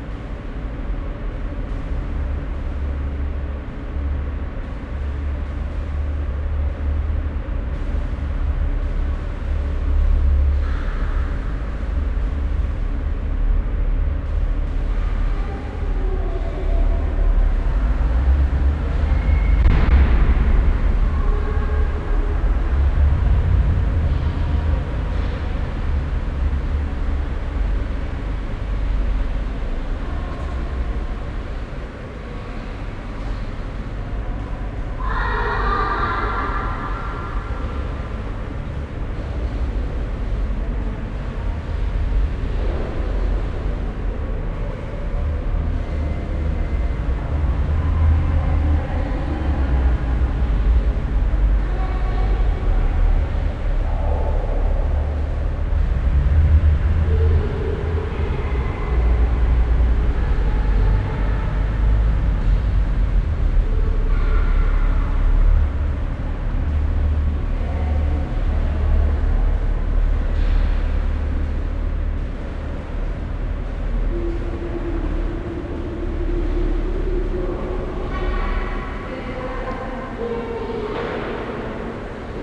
Altstadt, Düsseldorf, Deutschland - Düsseldorf, parking garage
Inside an almost empty parking garage. The deep resonating sound of car motors in the distant, steps and a car starting and exiting the level.
This recording is part of the exhibition project - sonic states
soundmap nrw - topographic field recordings, social ambiences and art places
8 November 2012, 17:15